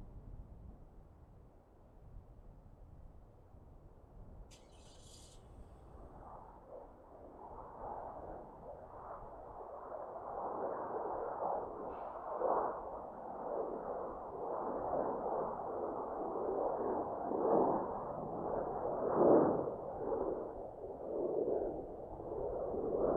MSP Spotters Park - MSP 30L Operations from Spotters Park
Landings and takeoffs on Runway 30L at Minneapolis/St Paul International Airport recorded from the Spotters Park.
Recorded using Zoom H5
Hennepin County, Minnesota, United States, 16 February 2022, 15:15